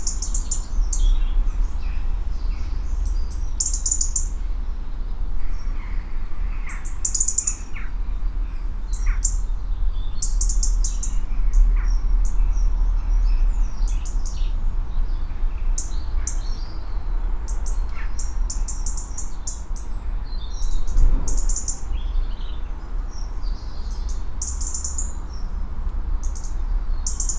Recordings in the Garage, Malvern, Worcestershire, UK - Jet Crows Birds Feet
Calm after a windy night, a high jet, loud crows, some song birds and my feet as I recover the equipment from the garage.
England, United Kingdom